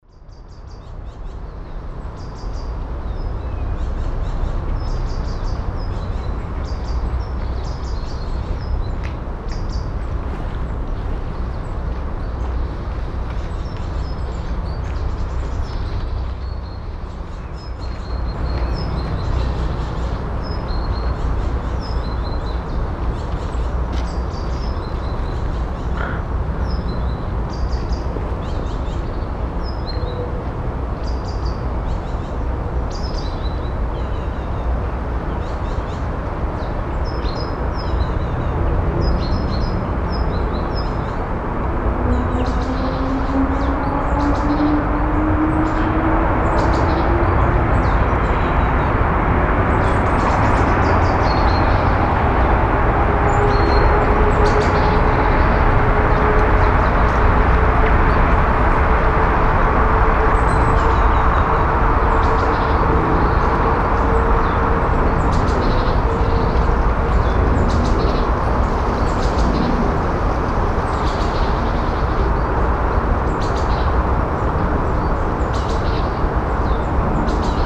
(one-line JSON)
{"title": "erkrath, neandertal, birkenhain", "description": "mittags im birkenhain, abseits des weges, plötzlich die vorbeifahrt der enfernten sbahnlinie\nsoundmap nrw:\nsocial ambiences/ listen to the people - in & outdoor nearfield recordings", "latitude": "51.22", "longitude": "6.96", "altitude": "127", "timezone": "GMT+1"}